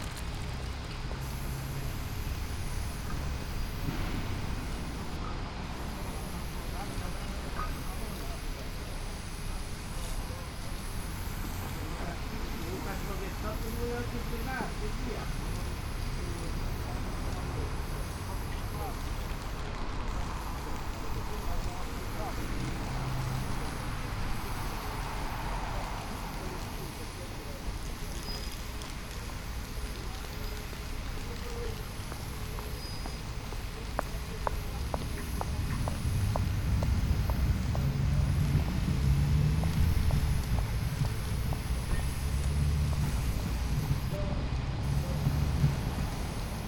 Poznan, Sobieskiego housing estate - paiting a zebra
Two workers painting a zebra crossing with spray paint. one operating the machine the other shaking the cans, separating the empty ones, knocking them on the road. Talking. Hum of the industrial vacuum cleaner coming form the auto cleaner in the background. Sounds of the nearby tram loop.